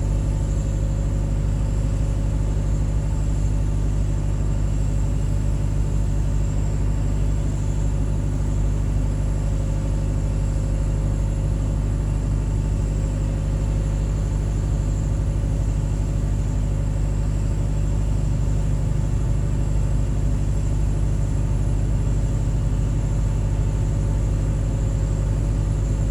Deponie Großziethen - waste disposal site, power station
waste disposal site between Berlin Gropiusstadt and airport Schönefeld, near village Großziethen. This site was property of the GDR before 1989, but was used by the city of Berlin (West) based on contract.
Sound of a power generator (a guess).
(Sony PCM D50, DPA4060)